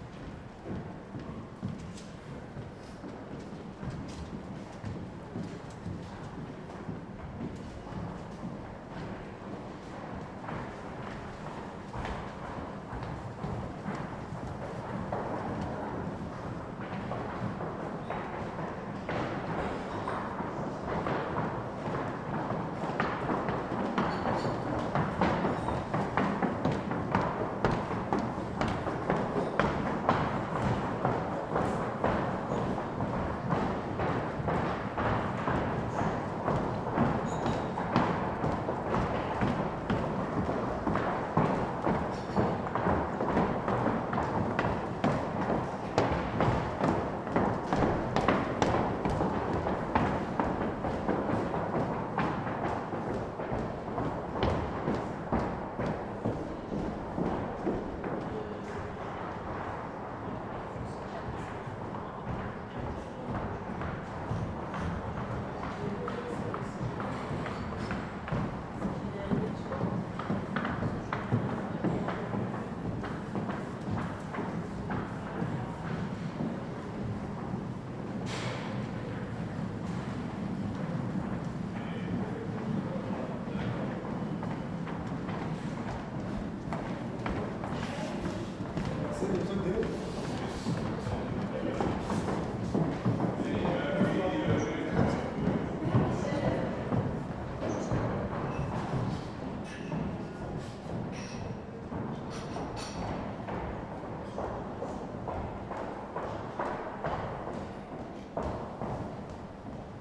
docks de jolliette marseille
enregistré sur nagra ares bb lors du tournage vieilles canaille